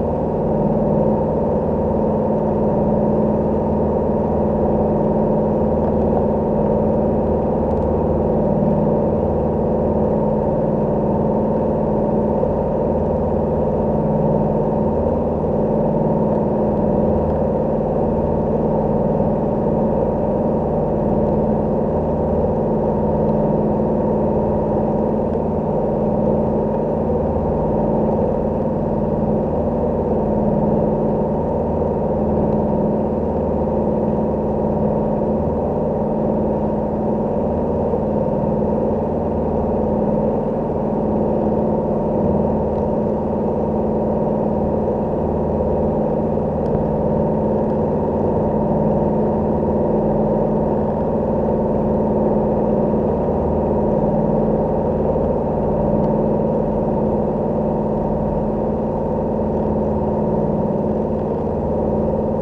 Newport, RI, USA - Boat Sounds
Motor sound from a taxi boat.
Recorded with a contact mic.